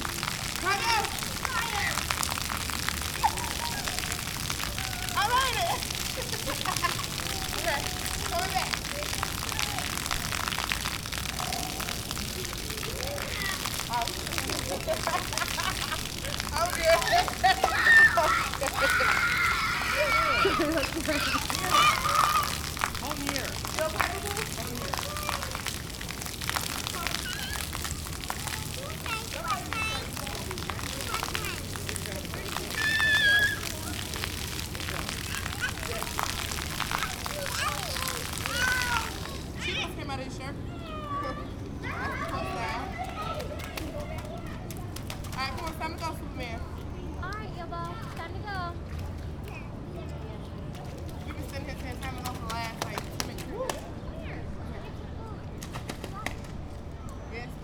Pedestrian Mall Fountain, Iowa City
water, fountain, children, laughter